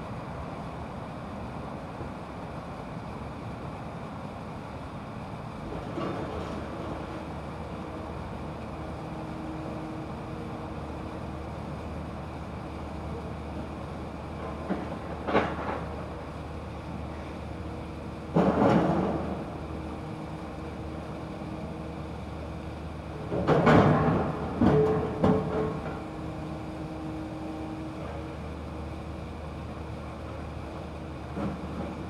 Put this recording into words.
construction yard, machine growl in distance, 공사장, 원거리 철거 소음